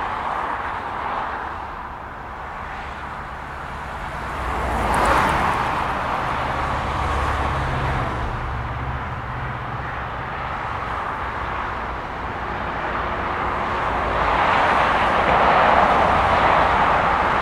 Haldon Chalets, Exeter, UK - Haldon road across A380 Telegraph Hill- Devon Wildland
This recording was made using a Zoom H4N. The recorder was positioned on the bridge over the A380 at the top of Telegraph Hill. Vehicles climbing the hill and crossing the road within Haldon Forest can be heard. This recording is part of a series of recordings that will be taken across the landscape, Devon Wildland, to highlight the soundscape that wildlife experience and highlight any potential soundscape barriers that may effect connectivity for wildlife.
Devon, England, United Kingdom, 19 July 2022